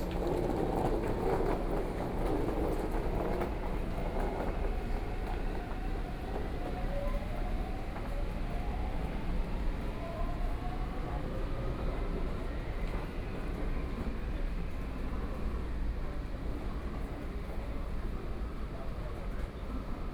Minquan W. Rd., Taipei City - walking on the Road
walking on the Road, Traffic Sound, Environmental sounds
Binaural recordings
27 February 2014, 08:33